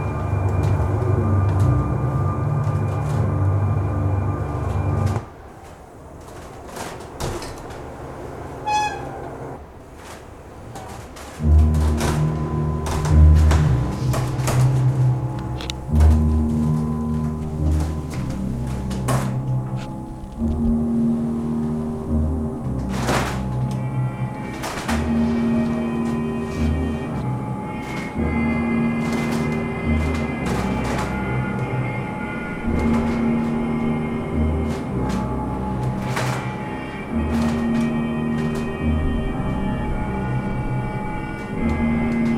Samphire Hoe Tower by Jon Easterby for Sustrans on "Samphire Hoe" - new land formed from tailings of the Channel Tunnel.
Kent, UK